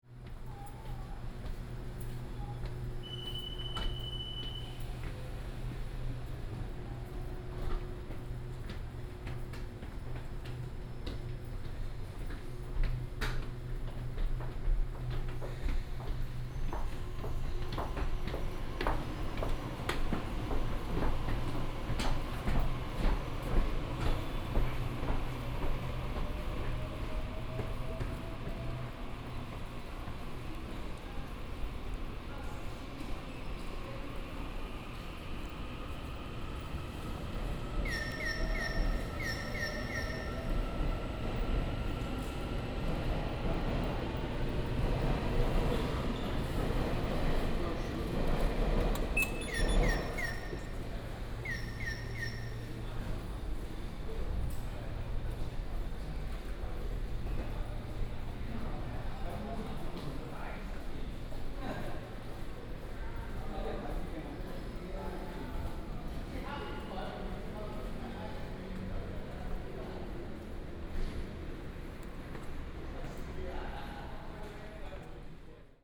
From the station platform, Through the hall, Go to the square outside the station